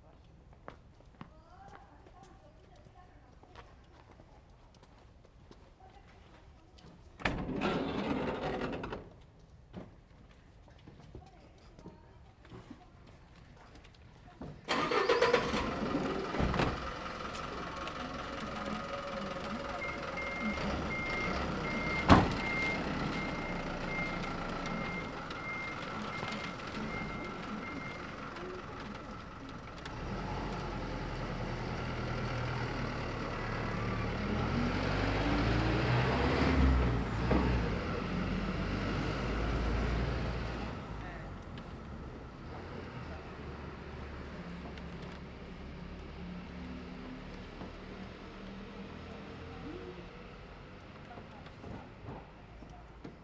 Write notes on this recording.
Small village, In the vicinity of the temple, Zoom H2n MS +XY